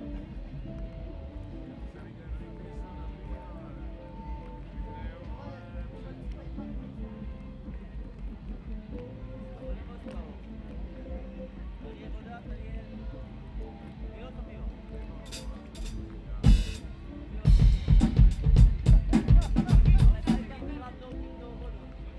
Kemp Jordán, Úžická, Odolena Voda, Česko - Zelífest ambience
Ambience at Zelífest music festival. Band playing in the background, water in pond plashing gently, quick drum soundcheck, human sounds.
Zoom H2n, 2CH, handheld.
Střední Čechy, Česko, 6 July 2019, ~18:00